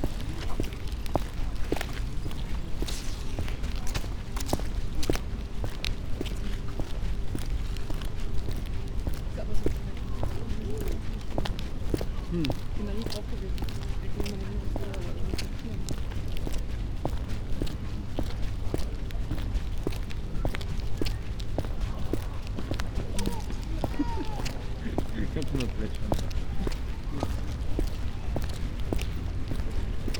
Spreepark, Plänterwald, Berlin, Germany - walking, asphalt road
steps, winds, people passing by
Sonopoetic paths Berlin